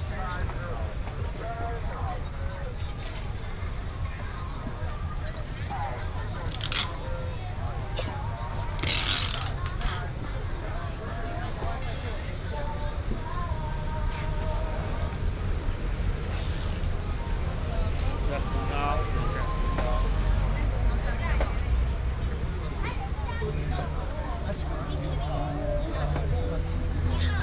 樹林頭觀光夜市, Hsinchu City - Walking in the night market
Walking in the night market, Binaural recordings, Sony PCM D100+ Soundman OKM II
27 September 2017, ~18:00